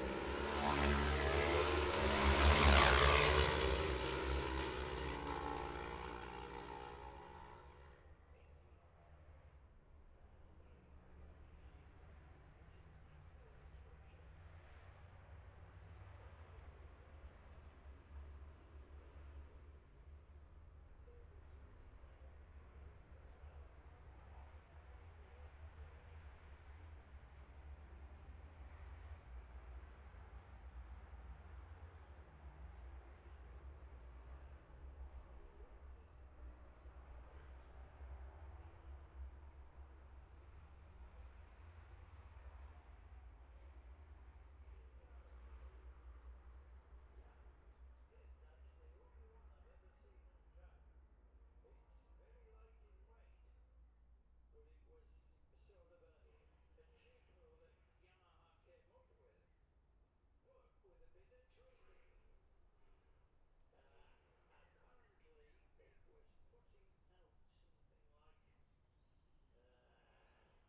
Jacksons Ln, Scarborough, UK - olivers mount road racing ... 2021 ...
bob smith spring cup ... twins group A practice ... dpa 4060s to MixPre3 ...
22 May 2021